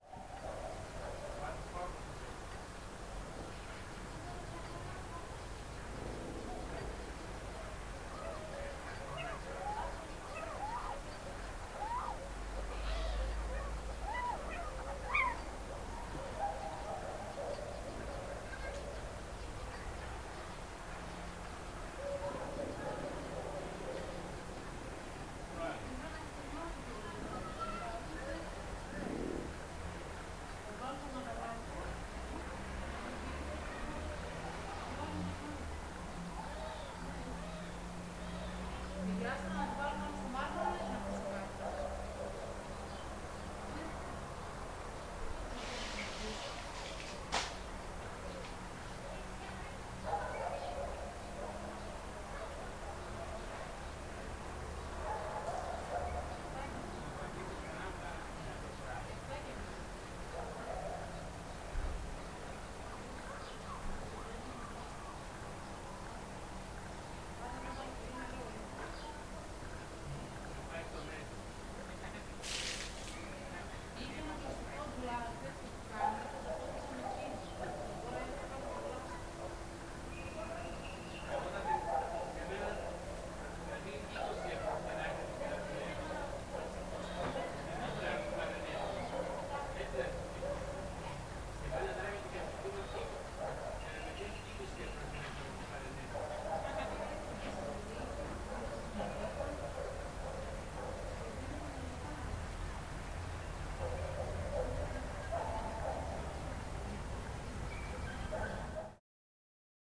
Record by : Alexadros hadjitimotheou
Αποκεντρωμένη Διοίκηση Ηπείρου - Δυτικής Μακεδονίας, Ελλάς, 7 August 2021, 20:52